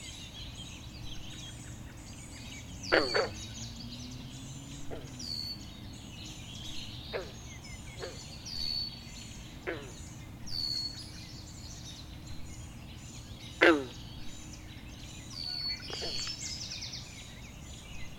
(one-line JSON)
{"title": "Taylor Creek Trail, Toronto, ON, Canada - Taylor Creek Frogs", "date": "2018-05-27 05:15:00", "description": "Recorded by a small swampy area on the opposite side of the recreational path next to Massey Creek in the Taylor Creek park system, in East York, Toronto, Ontario, Canada. This is an excerpt from a 75 minute recording of the dawn chorus on this date.", "latitude": "43.70", "longitude": "-79.31", "altitude": "105", "timezone": "America/Toronto"}